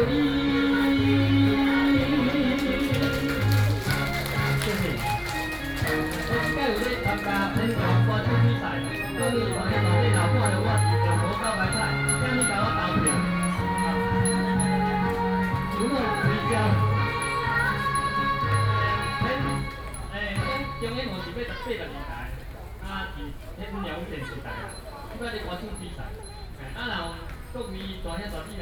Bali, New Taipei City - at the seaside park
New Taipei City, Taiwan, 1 July 2012, ~17:00